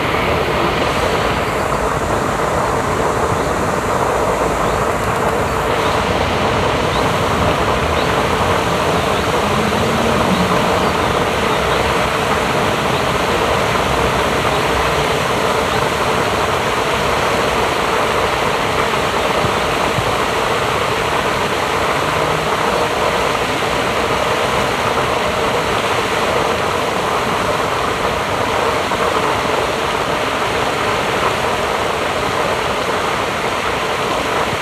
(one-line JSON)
{"title": "Stadtgarten, Essen, Deutschland - essen, stadtgarten, lake fountain", "date": "2014-04-14 18:30:00", "description": "Im Stadtgarten an einem kleinen Teich. Der Klang der Wasserfontäne.\nIm Hintergrund ein singender Obdachloser. Ein Wasservogel chirpt in kurzen Impulsen.\nIn the city garden at a small lake. The sound of the fountain. In the background a homeless singing.\nProjekt - Stadtklang//: Hörorte - topographic field recordings and social ambiences", "latitude": "51.44", "longitude": "7.01", "altitude": "104", "timezone": "Europe/Berlin"}